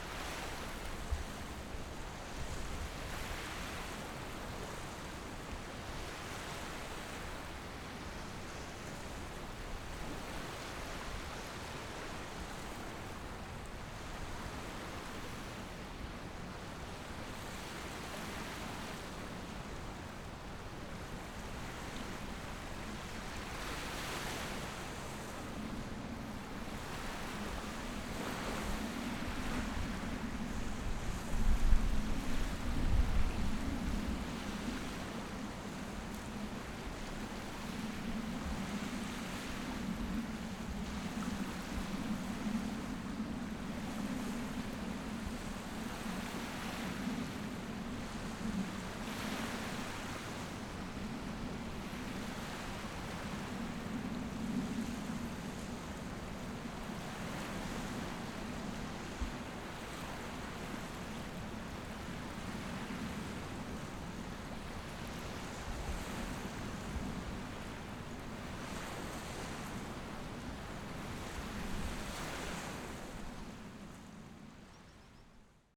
大菓葉漁港, Xiyu Township - Small beach
Small beach, Sound of the waves, Aircraft flying through
Zoom H6+Rode NT4